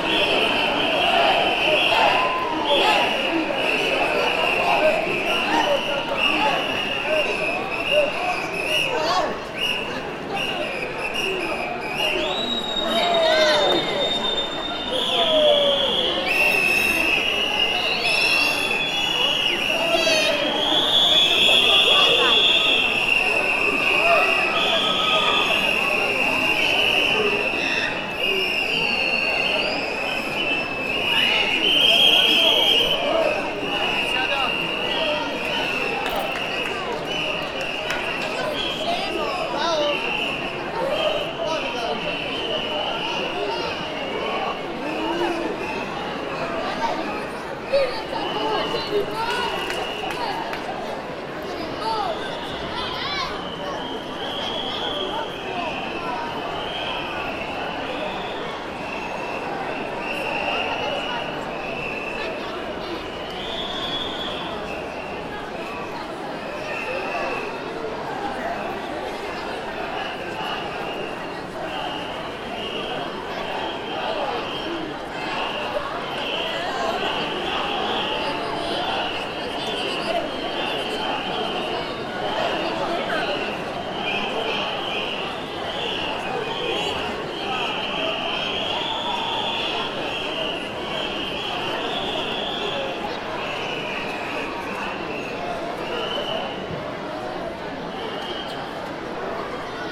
Taranto, Italy - Rally against industrial pollution
Public rally against the pollution created by the ILVA steelworks and ENI petrochemical plant and its link with the rising cases of cancer among the population. The two factories occupies an area that is approximately twice the one occupied by the nearby city of Taranto. This rally was one of the firsts after years of silent witnessing.
Recorded with Zoom H4N
Taranto TA, Italy, 27 April 2008